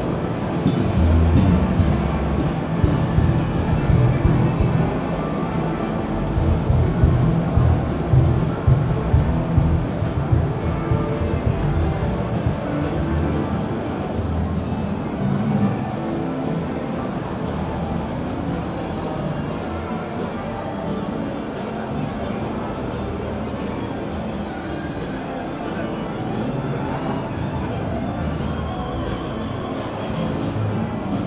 Westend-Süd, Frankfurt, Germany - musicmesse Hall 4.0
Deutschland, European Union, 11 April 2013, 16:10